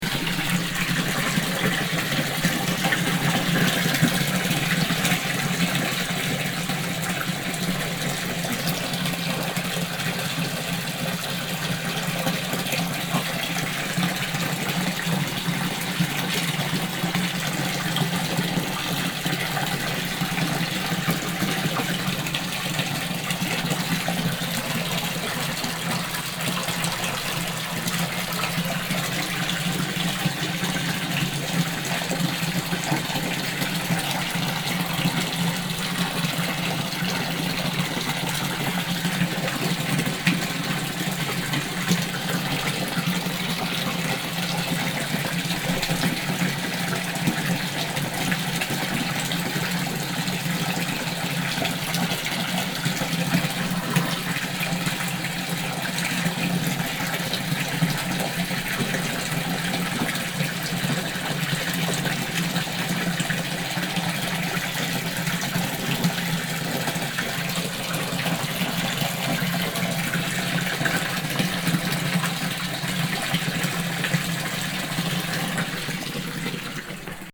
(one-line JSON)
{"title": "alto, small fountain on the way to chapell s. sebastino", "date": "2009-07-26 18:57:00", "description": "an old fountain at the foot path to thechapell san sebastino\nsoundmap international: social ambiences/ listen to the people in & outdoor topographic field recordings", "latitude": "44.11", "longitude": "8.00", "altitude": "650", "timezone": "Europe/Berlin"}